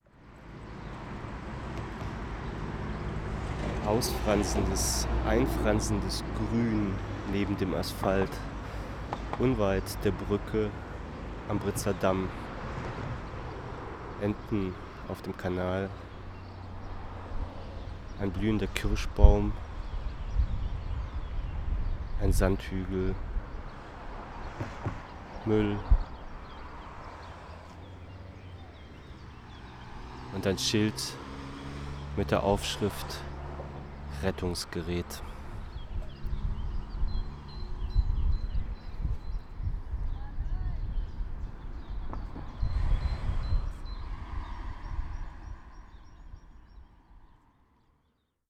berlin, britzer damm: brücke - DOPAL: bridge across teltow canal
spoken words by hensch
Descriptions Of Places And Landscapes: april 29, 2010